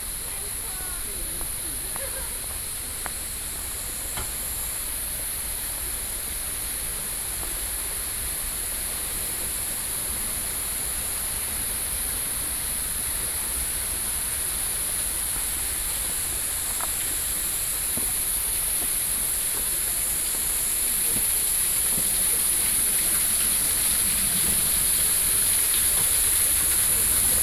Tianmu, Shilin District - Hiking trails
walking in the Hiking trails, Sony PCM D50 + Soundman OKM II